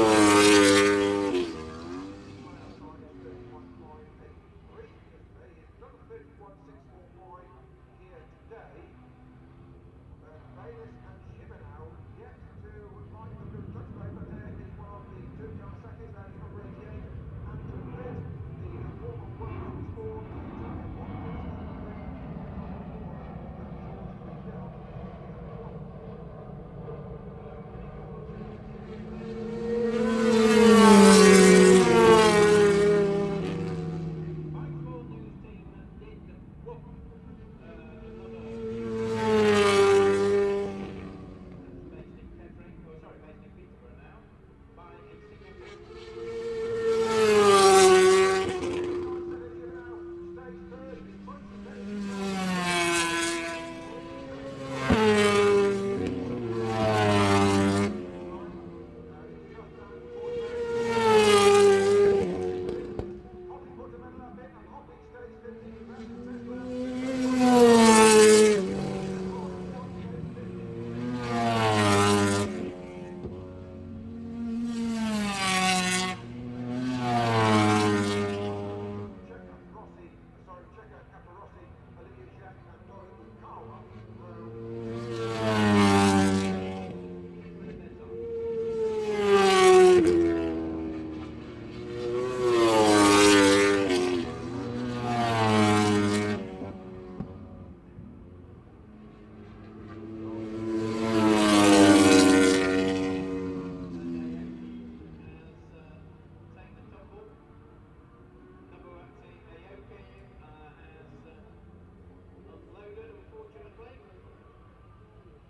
Castle Donington, UK - British Motorcycle Grand Prix 2003 ... moto grandprix ...
British Motorcycle Grand Prix 2003 ... Qualifying part one ... 990s and two strokes ... one point stereo mic to minidisk ...
Derby, UK, 12 July 2003